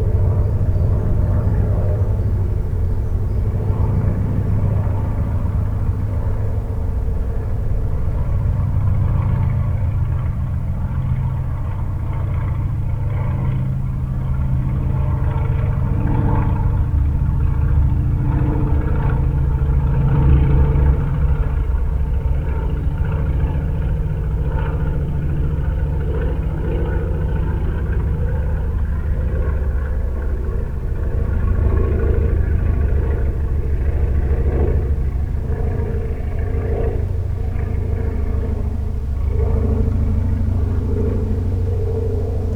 While preparing to launch skydivers an old bi-plane circles overhead the sound of the slow-revving engine echoes from the hills and surrounding landscape. MixPre 6 II 2 x Sennheiser MKH 8020s